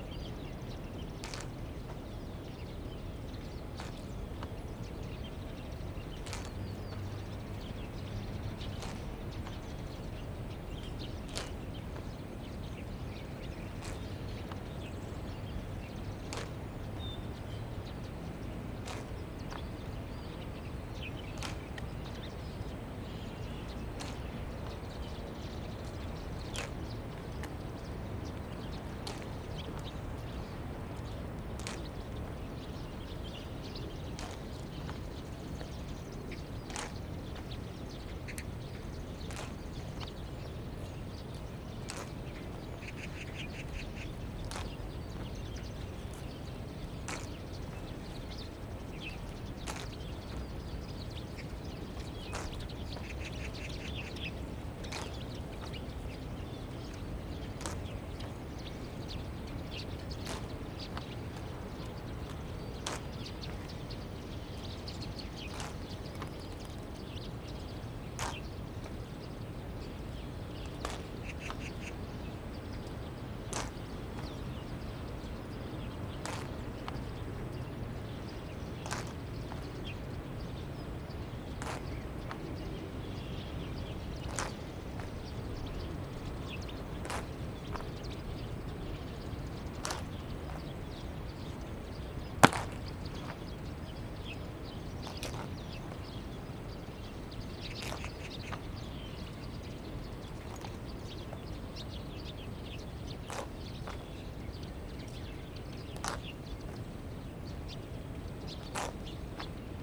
Taipei, Taiwan - Footsteps
Honor Guard, Footsteps, Sony ECM-MS907, Sony Hi-MD MZ-RH1